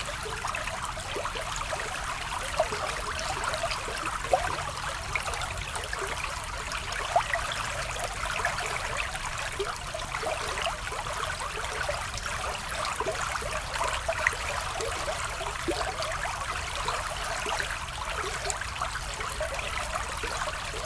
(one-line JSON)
{"title": "Mont-Saint-Guibert, Belgique - Houssière river", "date": "2016-08-14 19:05:00", "description": "The Houssière river, flowing in the small and quiet village of Hévillers.", "latitude": "50.62", "longitude": "4.61", "altitude": "101", "timezone": "Europe/Brussels"}